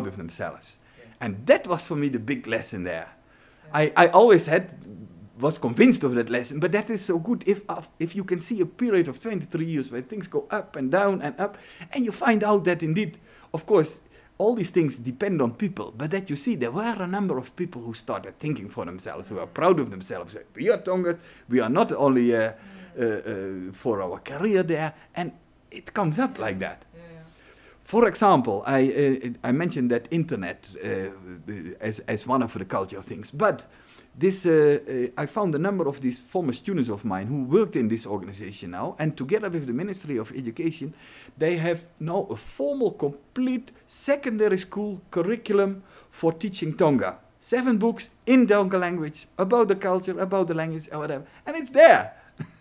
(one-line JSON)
{"title": "Office of Rosa Luxemburg Foundation, Johannesburg, South Africa - Jos Martens – proud people of the river…", "date": "2010-04-28 17:52:00", "description": "here Jos tells about his recent revising of Binga, now Basilwizi Trust has taken over the local development work. Basilwizi, that is \"the people of the river\"...\nThe entire interview with Jos Martens is archived here:", "latitude": "-26.14", "longitude": "28.03", "altitude": "1663", "timezone": "Africa/Johannesburg"}